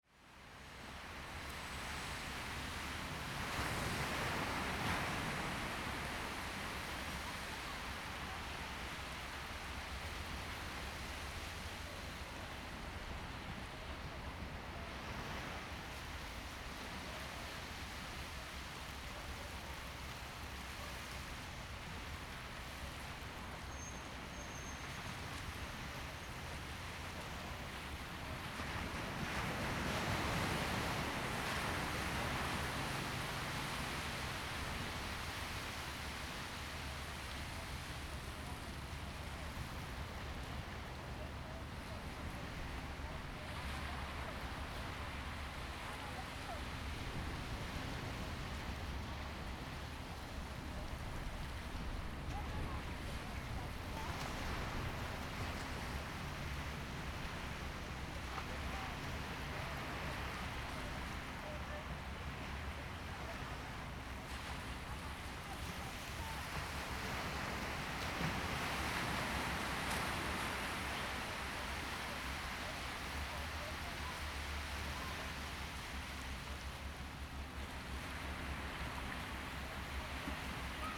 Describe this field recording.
At the beach, Sound of the waves, Zoom H2n MS+XY